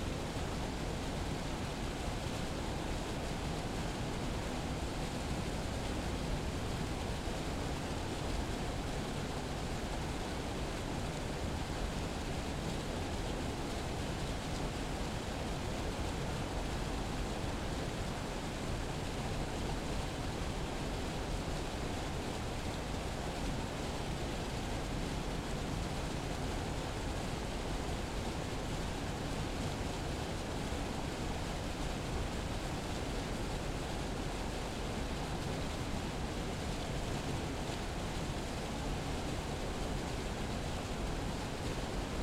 Kolín, Czechia, dam and train
14 August 2017, 22:00